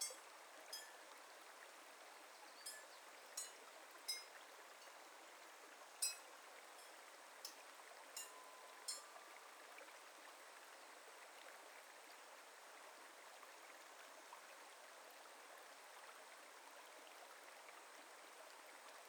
Imarichō, Imari, Saga, Japan - Water Scoop Earth Mills in Cool Shade
Traditional river fed clay mills at Imari (伊万里) Pottery Village. The chimes are a motion sensor triggered pottery bell tree that is installed next to the mills. Summer 2020.
佐賀県, 日本, 20 August 2020, 12:50